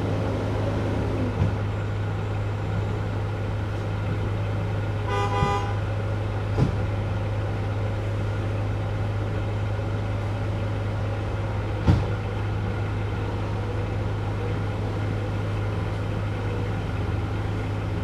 Suffex Green Ln NW, Atlanta, GA, USA - Surprise residential roadwork
This is a snippet from a nearly hour-long recording of a work crew ripping up pavement right outside my apartment. This residential roadwork was done without notifying any of the residents of the apartment complex. Furthermore, some of the neighbors were angry because they didn't get a chance to move their cars before the work started and the dust and gravel was landing on their vehicles. The work started at around 8:00 in the morning and continued well into the PM. In this section of the recording you can hear jackhammers, trucks, car horns, and other sounds associated with roadwork and heavy machinery. Recorded with the Tascam DR-100MKiii and a custom-made wind reduction system.
Georgia, United States of America